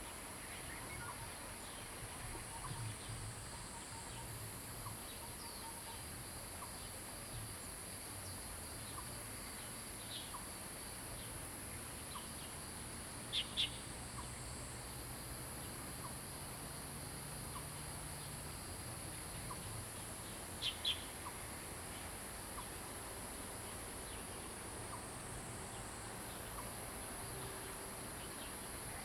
埔里鎮桃米里, Nantou County, Taiwan - Bird calls
Bird calls, Frog chirping, Brook
Zoom H2n MS+ XY